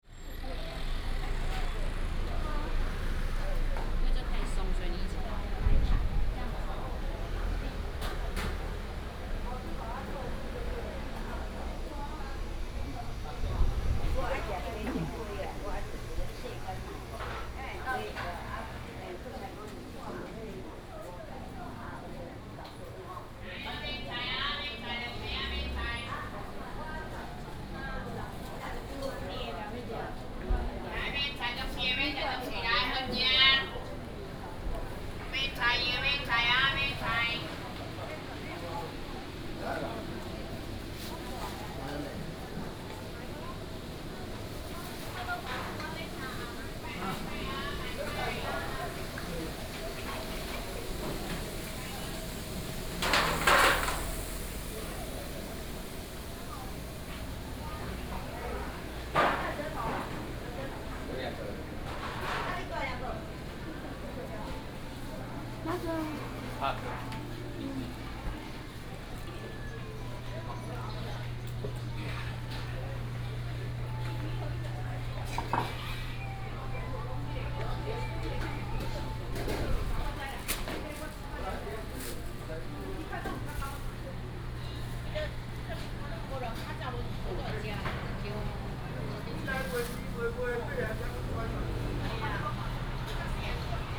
東園市場, Wanhua Dist., Taipei City - traditional market
Traditional market, traffic sound, In the alley